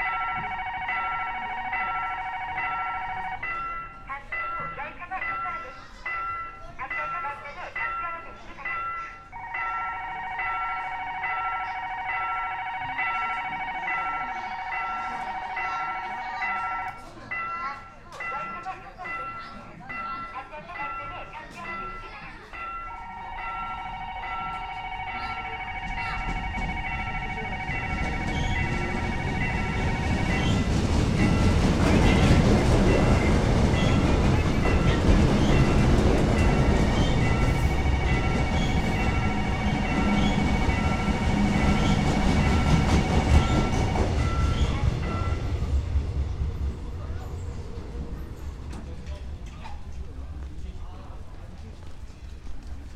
Hankuk Univ. of Foreign Studies Station - 외대앞 crossing alarm
One of the few level crossings in Seoul...there have always been crossing guides there on my (few) visits...
Imun, Seoul, South Korea, 6 February 2019